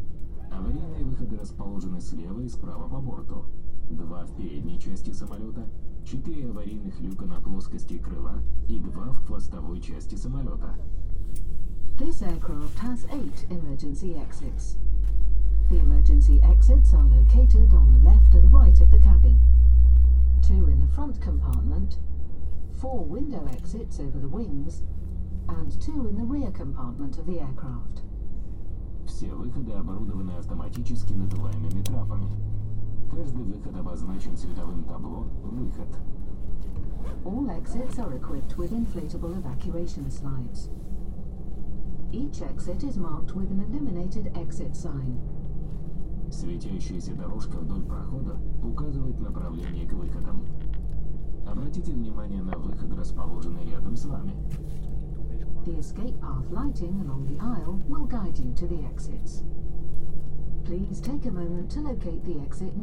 Yerevan, Arménie - Into the plane
Take off of the Aeroflot plane from Yerevan Armenia, Zvartnots airport, to Moscow Russia, Sheremetyevo airport.
Yerevan, Armenia, 15 September